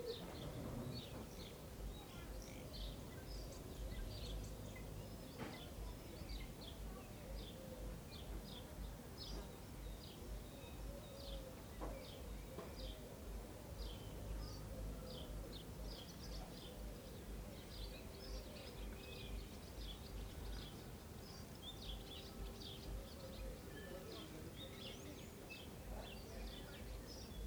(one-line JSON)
{"title": "Chemin des Sablons, La Rochelle, France - helicopter passage seveso district", "date": "2020-04-11 19:19:00", "description": "helicopter passage seveso district then sounds of the avifauna district of spring\nORTF DPA 4022 = Mix 2000 AETA = Edirol R4Pro", "latitude": "46.17", "longitude": "-1.21", "altitude": "10", "timezone": "Europe/Paris"}